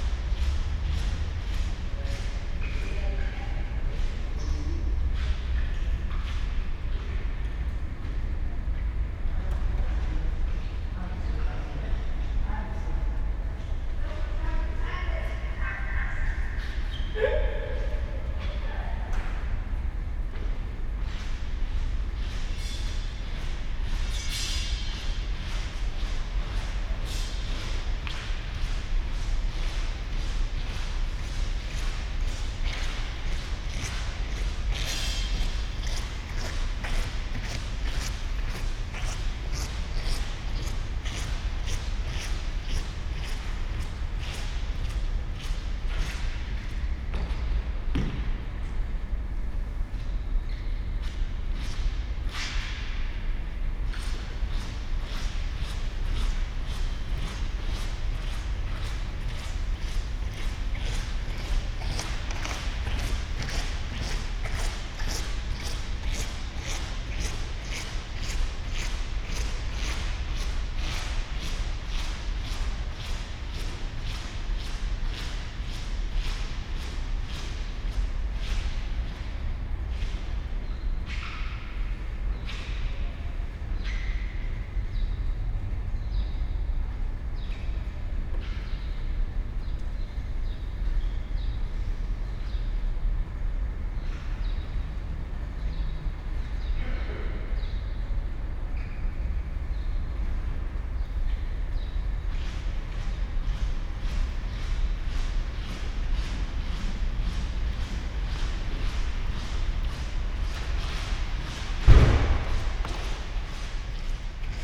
Panellinios indoor hall, Athen - hall ambience, birds, a man cleaning the floor
inside Panellinios indoor sport hall. I was attracted by birdsong inside, at the open door, thus entering. After a while, a man with squeaking shoes started to clean the wooden floor.
(Sony PCM D50, Primo EM172)
7 April 2016, 10:55